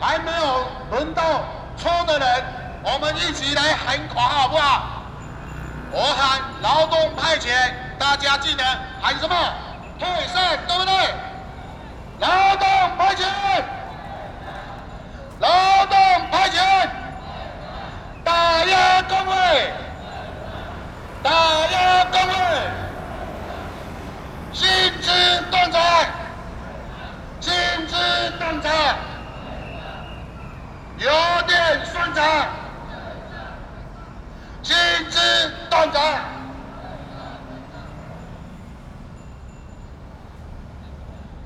Zhongzheng District, Taipei - labor protests
labor protests, Sony PCM D50 + Soundman OKM II
中正區 (Zhongzheng), 台北市 (Taipei City), 中華民國, 1 May 2012, 15:24